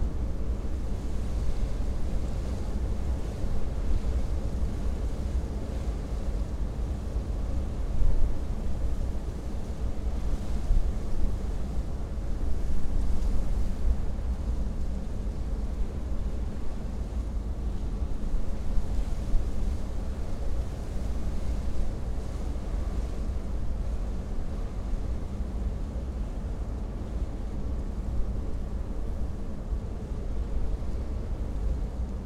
wind in fortifications, Smiltyne, Lithuania
windy day as heard from the remains of old german fortification